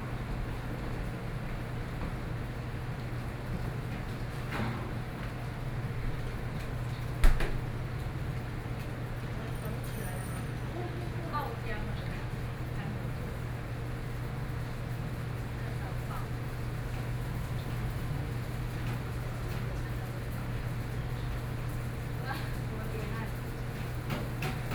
{
  "title": "Beitou, Taipei - At the restaurant",
  "date": "2013-10-16 19:08:00",
  "description": "At the restaurant entrance, Ordering, Traffic Noise, Binaural recordings, Sony PCM D50 + Soundman OKM II",
  "latitude": "25.14",
  "longitude": "121.49",
  "altitude": "13",
  "timezone": "Asia/Taipei"
}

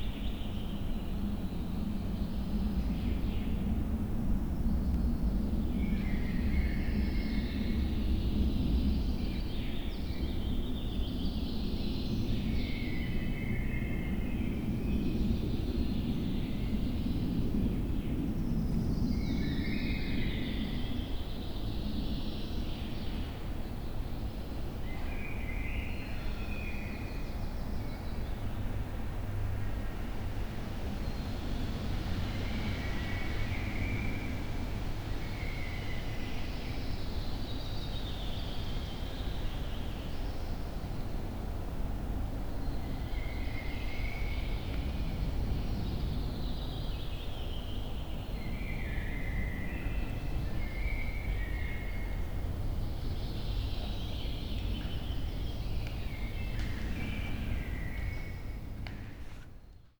{
  "title": "Vogelsang, ex Soviet base, Germany - derelict sports hall, outside ambience inside",
  "date": "2017-06-16 13:45:00",
  "description": "wind, birds, aircraft crossing, heard inside sportshall through open windows\n(SD702, MKH8020)",
  "latitude": "53.06",
  "longitude": "13.37",
  "altitude": "57",
  "timezone": "Europe/Berlin"
}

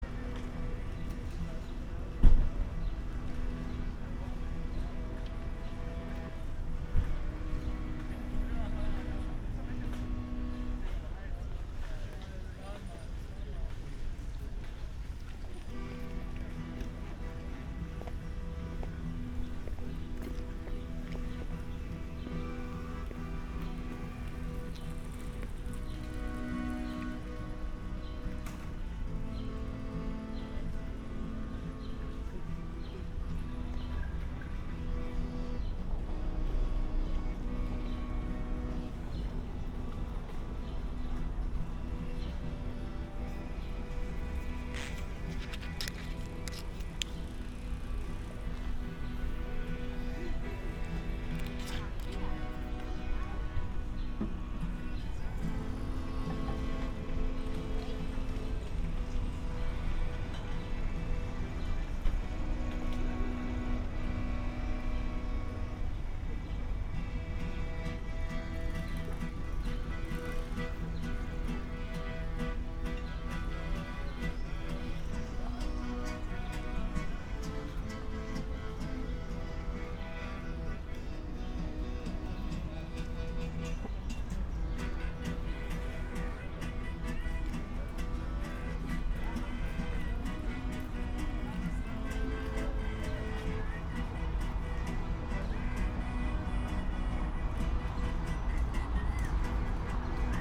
{
  "title": "maybachufer, markt, eingang - Landwehrkanal ambience",
  "date": "2014-07-19 20:15:00",
  "description": "Saturday early evening at the Landwehrkanal, people passing-by, others gather along the canal, buskers playing, relaxed atmosphere\n(log of the live radio aporee stream, iphone 4s, tascam ixj2, primo em172)",
  "latitude": "52.49",
  "longitude": "13.42",
  "altitude": "42",
  "timezone": "Europe/Berlin"
}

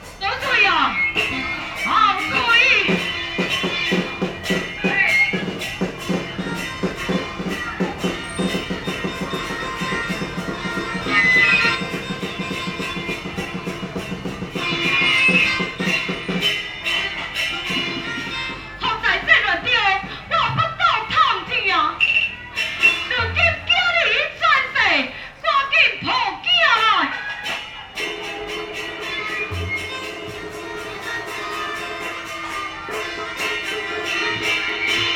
{
  "title": "Qixian 3rd Rd., Yancheng Dist., Kaohsiung City - Taiwanese (folk) opera",
  "date": "2012-04-11 17:05:00",
  "latitude": "22.63",
  "longitude": "120.28",
  "altitude": "9",
  "timezone": "Asia/Taipei"
}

